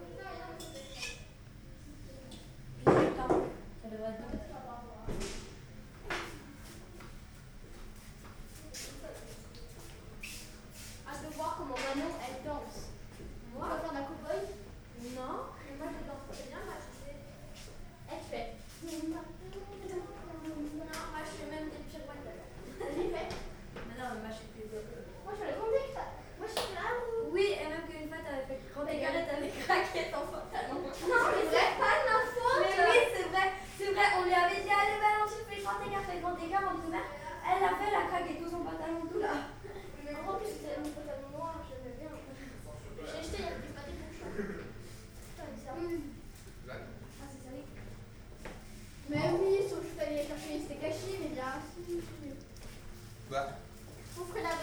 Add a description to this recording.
Projet ado : Dans la cuisine ils préparent le repas de la soirée ado. Dans le cadre de l’appel à projet culturel du Parc naturel régional des Ballons des Vosges “Mon village et l’artiste”